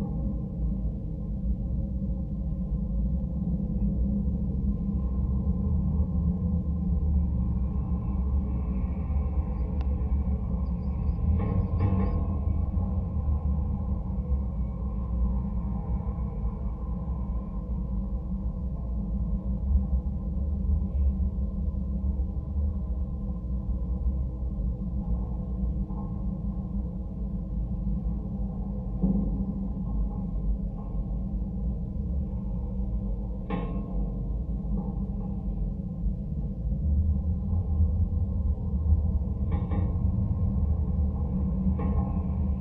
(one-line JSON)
{"title": "Rytmečio g., Karkiškės, Lithuania - Water tower support cable", "date": "2020-05-01 11:00:00", "description": "Dual contact microphone recording of a long water tower support cable. Wind, ambience and occasional traffic sounds are droning and reverberating along the cable.", "latitude": "54.88", "longitude": "23.83", "altitude": "75", "timezone": "Europe/Vilnius"}